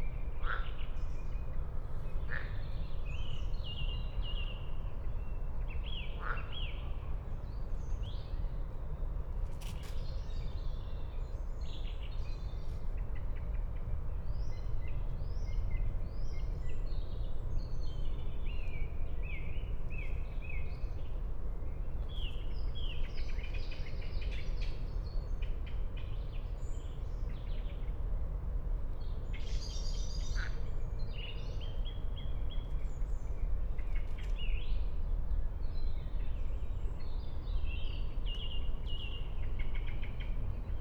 Königsheide, Berlin - evening ambience at the pond
evening at the Königsheide pond, distant city rumble
(SD702, MKH8020)
Berlin, Germany, 2 June 2020